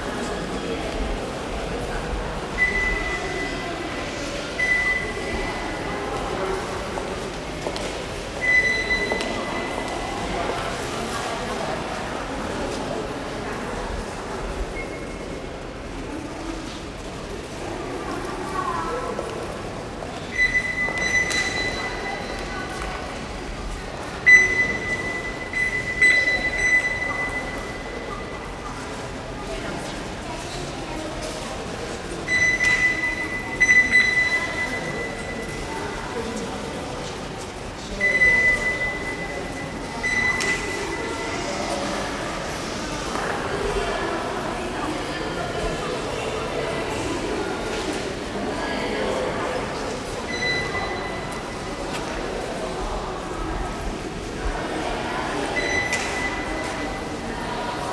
Kaoshiung, Taiwan - Formosa Boulevard Station
高雄市 (Kaohsiung City), 中華民國